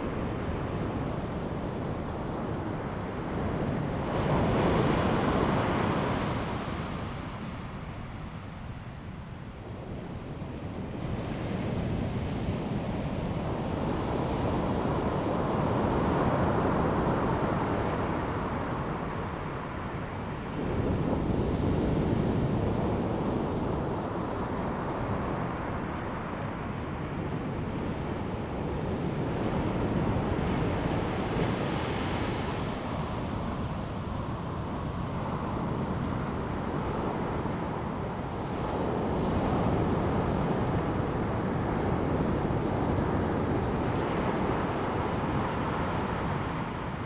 大溪, 太麻里鄉南迴公路 - on the beach
on the beach, Sound of the waves, traffic sound
Zoom H2N MS+ XY